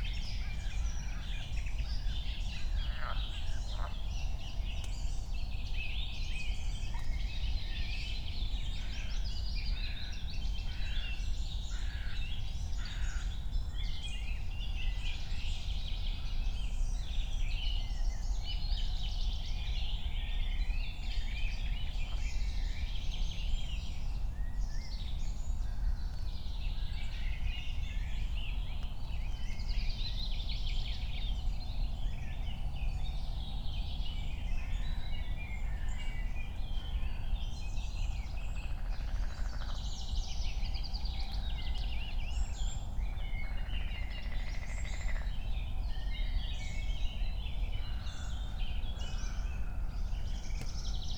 Königsheide, Berlin - forest ambience at the pond
7:00 drone, trains, frog, crows, more birds